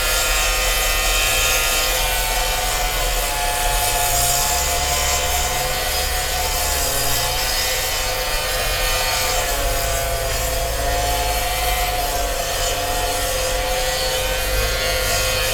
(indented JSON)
{
  "title": "Road Works - Malvern Wells, Worcestershire, UK",
  "date": "2020-06-22 11:10:00",
  "description": "Men and machines resurfacing the road. Recorded with a Sound devices Mix Pre 6 II and 2 Sennheiser MKH 8020s",
  "latitude": "52.08",
  "longitude": "-2.33",
  "altitude": "122",
  "timezone": "Europe/London"
}